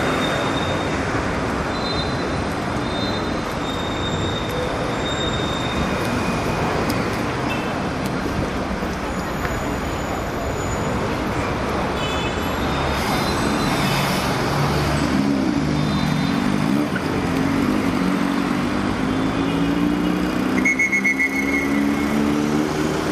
Av. Rio Branco, RJ. - Av. Rio Branco
Av. Rio Branco, height Teatro Municipal. -- Av. Rio Branco, altura do Teatro Municipal.